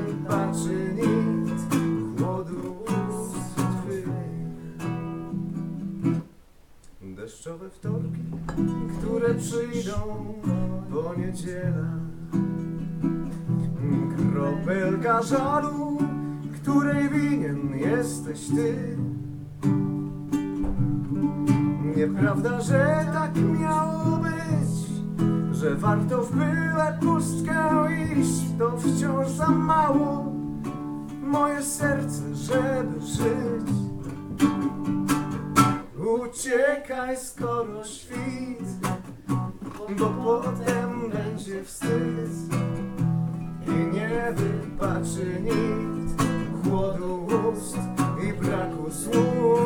… the small hours of the morning, after a long 40th birthday party… the remaining guests reassemble the living room… settle around the low table… and Anna reaches for the Polish song books in the shelves behind her….
… in den frühen Morgenstunden, nach einer langen 40sten Geburtstagsparty… die verbleibenden Gäste setzen das Wohnzimmer wieder in Stand… sammeln sich um den niedrigen Tisch… und Anna greift nach den Polnischen Liederbüchern im Regal hinter ihr…
mobile phone recording
Anna Huebsch is an artist, originally from Gdansk, now based in Hamm.
Hamm, Germany